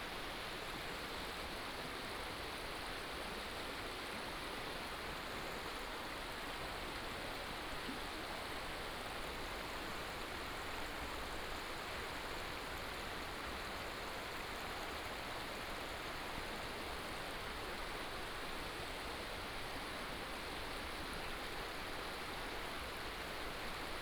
金崙溪, Taimali Township, Taitung County - Stream sound
Stream sound, On the river bank